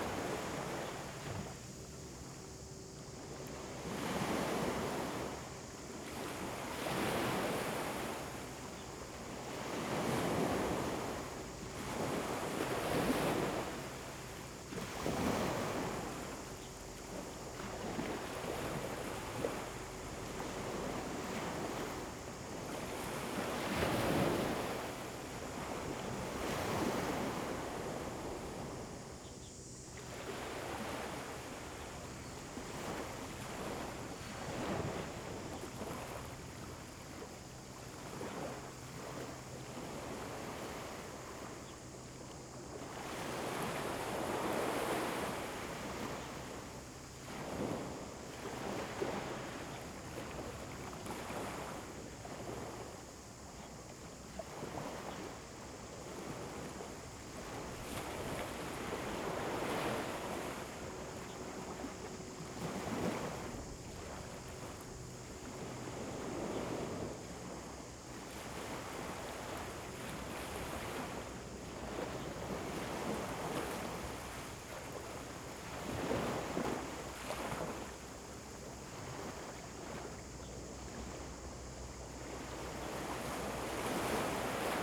鹽寮漁港, Shoufeng Township - Small fishing port
Small fishing port, Sound of the waves, Very Hot weather
Zoom H2n MS+XY